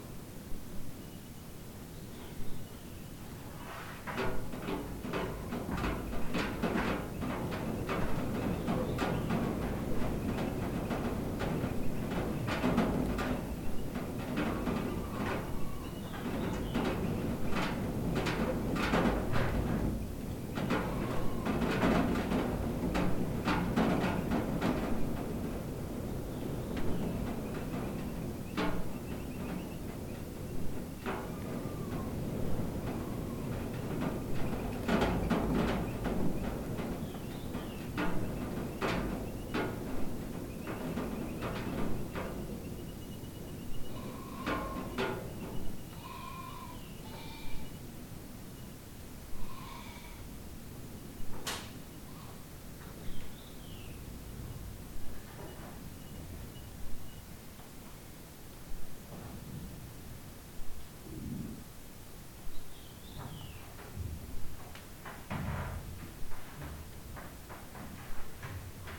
Kollafoss, Vesturárdalur, Northwest, Iceland - Wind, Metals, Sheep & Birds
Recording inside an old abandoned concrete barn with wind hitting loose metal along with the occasional sounds of sheep and birds. Recorded with a Zoom H4N